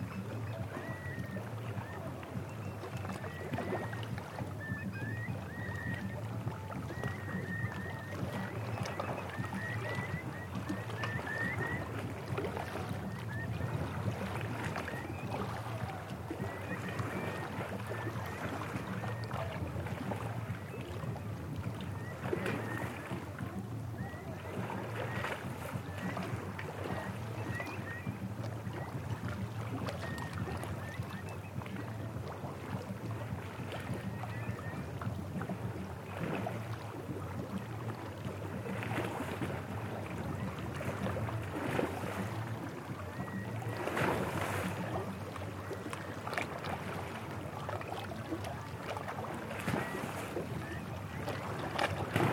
Lithuania - Harbour at night
Recordist: Anita Černá
Description: Night recording next to a crane in the harbour. Water sounds and a lot of seagull noise. Recorded with ZOOM H2N Handy Recorder.